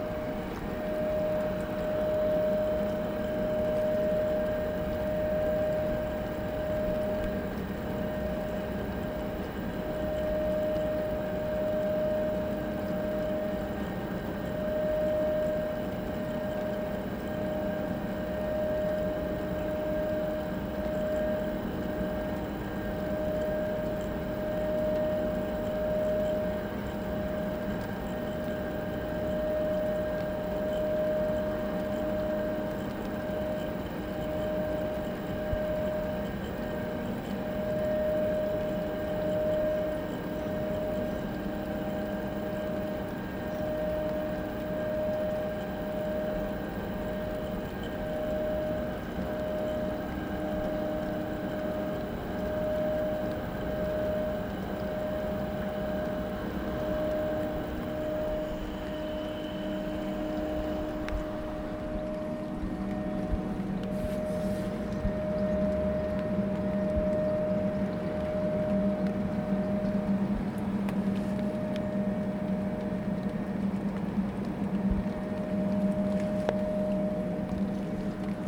Newington Rd, Newcastle upon Tyne, UK - Industrial units
Walking Festival of Sound
13 October 2019
Industiral units, electrical noise/hum, 3 in a row.
England, United Kingdom, 13 October 2019